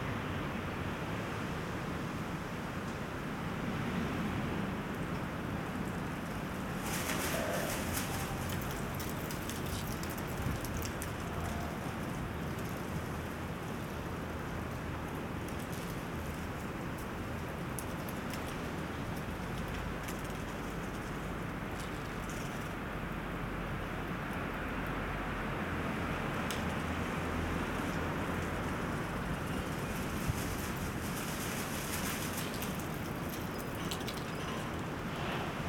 Windy but not stormy.
Tech Note : Ambeo Smart Headset binaural → iPhone, listen with headphones.